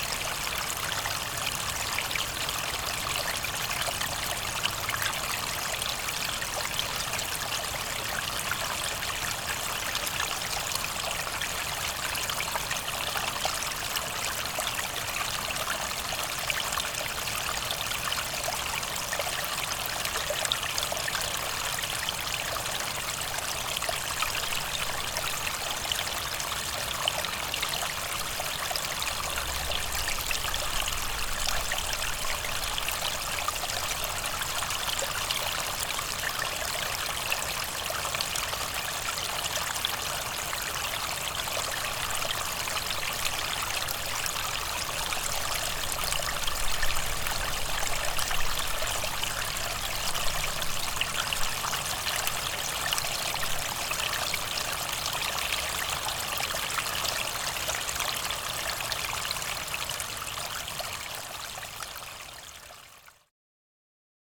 Recording of a small stream of water next to the Constitution Marsh Audubon Center.
Putnam County, New York, United States of America, 29 February 2020